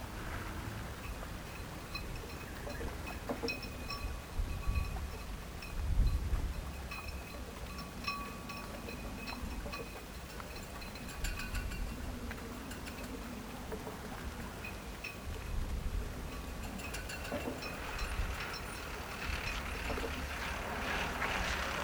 sur le port de loi